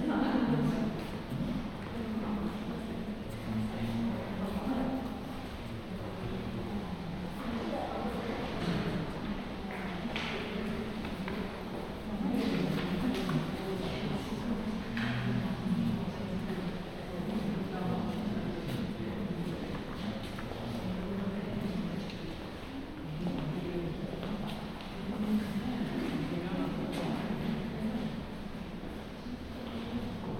{"title": "Sagatenryūji Susukinobabachō, Ukyo Ward, Kyoto, Japan - 202007051434 Fukuda Art Museum, 2F Gallery", "date": "2020-07-05 14:34:00", "description": "Title: 202007051434 Fukuda Art Museum, 2F Gallery\nDate: 202007051434\nRecorder: Zoom F1\nMicrophone: Luhd PM-01Binaural\nTechnique: Binaural Stereo\nLocation: Saga-Arashiyama, Kyoto, Japan\nGPS: 35.013843, 135.676228\nContent: binaural, stereo, japan, arashiyama, kansai, kyoto, people, museum, fukuda, art, gallery, 2020, summer, second floor", "latitude": "35.01", "longitude": "135.68", "altitude": "36", "timezone": "Asia/Tokyo"}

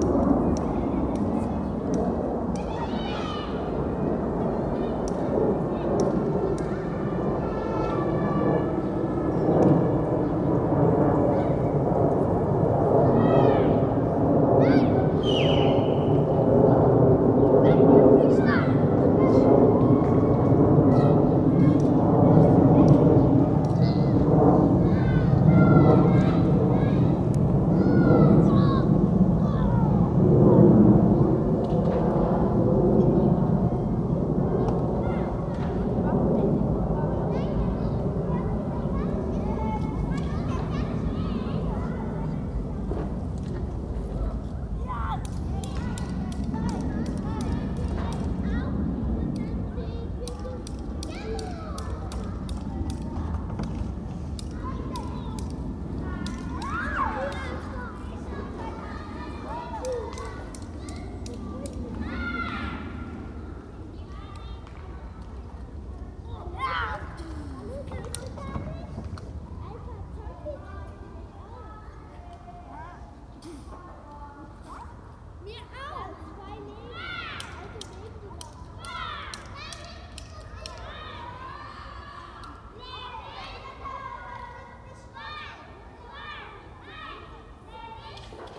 {"title": "Gesundbrunnen, Berlin, Germany - Reverberant appartments - low plane with kids and toy gun clicks", "date": "2011-10-23 15:02:00", "description": "The layout of these appartments creates a unique soundscape all of its own. Every sound gains an extra presence as it reverberates around the space.", "latitude": "52.56", "longitude": "13.39", "altitude": "44", "timezone": "Europe/Berlin"}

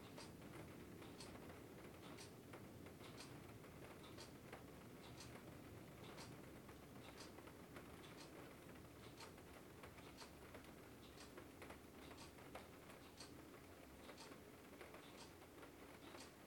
{"title": "Kensington, CA, USA - Rainy Kitchen", "date": "2016-11-26 04:16:00", "description": "Rain falls on the sunroof while a clock ticks and a dog sleeps.", "latitude": "37.91", "longitude": "-122.27", "altitude": "242", "timezone": "GMT+1"}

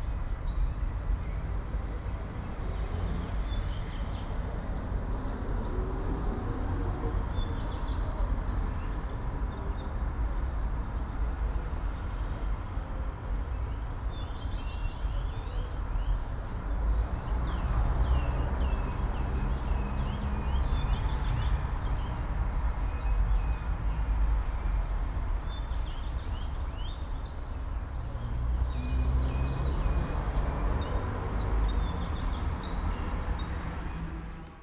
{"title": "all the mornings of the ... - apr 17 2013 wed", "date": "2013-04-17 06:48:00", "latitude": "46.56", "longitude": "15.65", "altitude": "285", "timezone": "Europe/Ljubljana"}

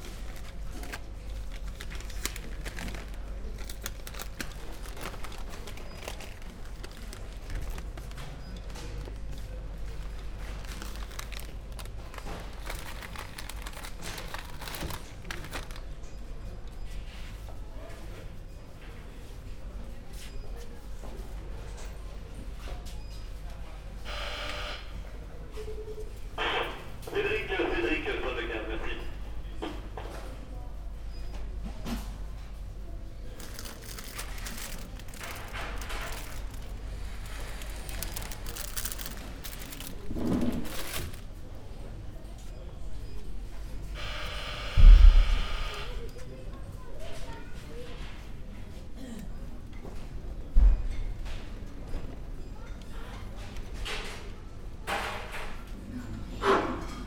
{"title": "Ottignies-Louvain-la-Neuve, Belgique - In the supermarket", "date": "2017-05-20 15:05:00", "description": "Shopping in the supermarket, on a quiet saturday afternoon.", "latitude": "50.66", "longitude": "4.57", "altitude": "59", "timezone": "Europe/Brussels"}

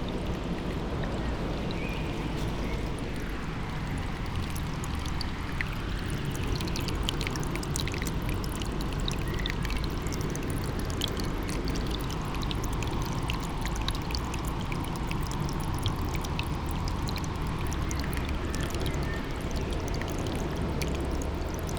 {"title": "river Mura, near Trate - tiny stream, fern seeds ...", "date": "2015-06-20 10:55:00", "description": "several tiny streams of water flows into the river through undergrowth with beautiful fern (summer solstice time), miniature curved sand dunes allover", "latitude": "46.70", "longitude": "15.78", "altitude": "239", "timezone": "Europe/Ljubljana"}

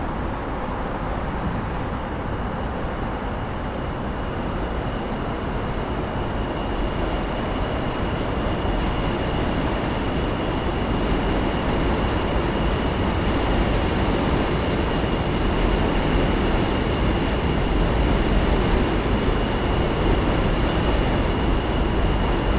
{"title": "Williamsburg Bridge, Brooklyn, NY, USA - Traffic on the Williamsburg Bridge", "date": "2019-08-09 03:53:00", "description": "Sounds of traffic on the Williamsburg Bridge.\nZoom h6", "latitude": "40.71", "longitude": "-73.97", "altitude": "1", "timezone": "America/New_York"}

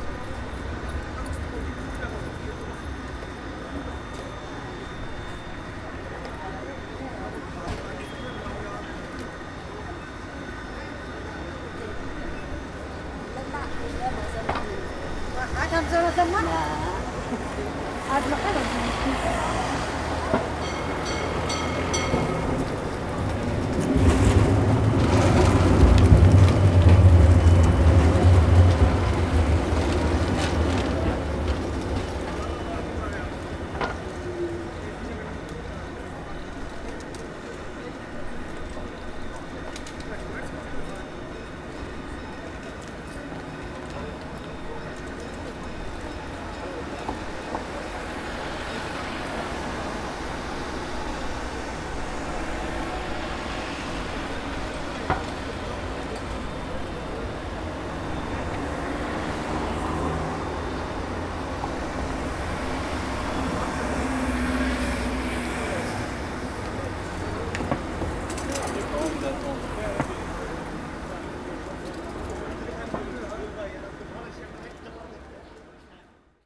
{"title": "Bd Félix Faure, Saint-Denis, France - Corner of Bld Félix Faure & R. Gabriel Péri", "date": "2019-05-27 11:20:00", "description": "This recording is one of a series of recording mapping the changing soundscape of Saint-Denis (Recorded with the internal microphones of a Tascam DR-40).", "latitude": "48.94", "longitude": "2.36", "altitude": "31", "timezone": "Europe/Paris"}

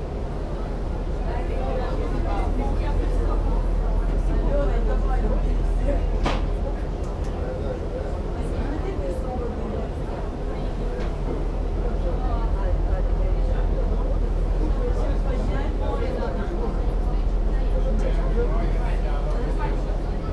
{"title": "South of Crete, Libyan Sea - ferry to Sfakion", "date": "2012-09-29 18:24:00", "description": "ferry ride to Sfakion. talks of the passengers limited by the hum of engines.", "latitude": "35.19", "longitude": "24.01", "timezone": "Europe/Athens"}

